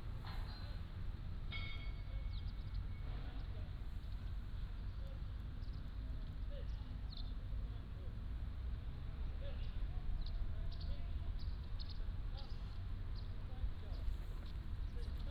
福澳港, Nangan Township - In the playground
In the playground